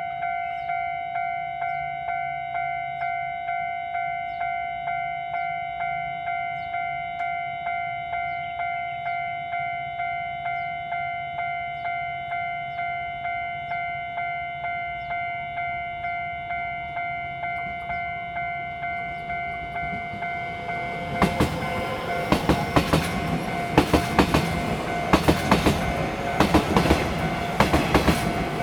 Mingde St., 花壇鄉 - in the railroad crossing
in the railroad crossing, Bird call, Traffic sound, The train passes by
Zoom H2n MS+ XY